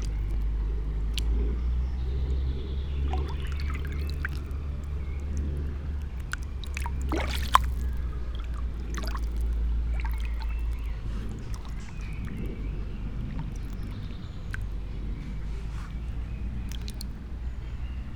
Mariborski otok, river Drava, tiny sand bay under old trees - rounded brown clouds over the footprints in the sand